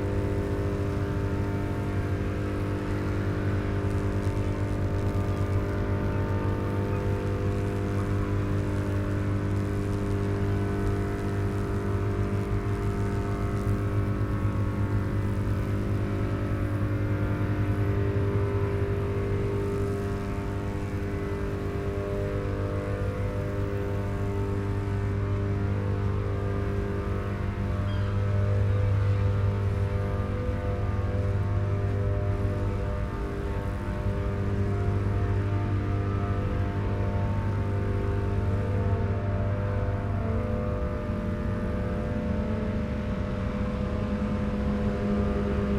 E-on coal burning powerstation, Maasvlakte - Transformers at E-ON power station
Telinga Parabolic microphone recording of electricity transformers.
Recording made for the film "Hoe luidt het land" by Stella van Voorst van Beest.